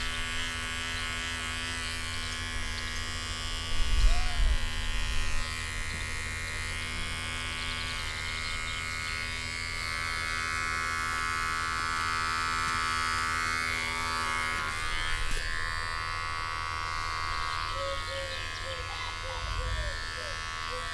Chapel Fields, Helperthorpe, Malton, UK - lockdown ... backyard haircut ...